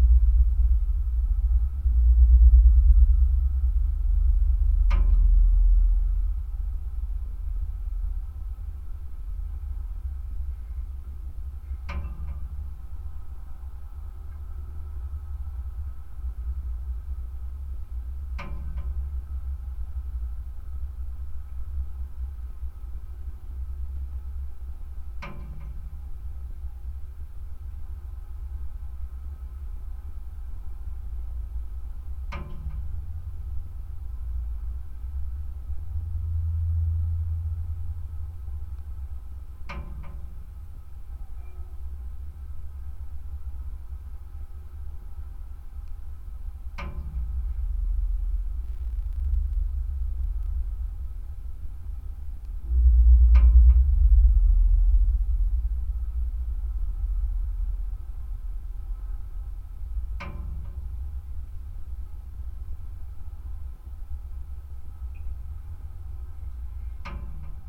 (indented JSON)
{
  "title": "Ąžuolija, Lithuania, metalic stairs in abandoned watertower",
  "date": "2018-09-01 15:20:00",
  "description": "abandoned watertower not so far from railway station. contact microphones on the metalic stairways inside the tower",
  "latitude": "55.45",
  "longitude": "25.57",
  "altitude": "136",
  "timezone": "GMT+1"
}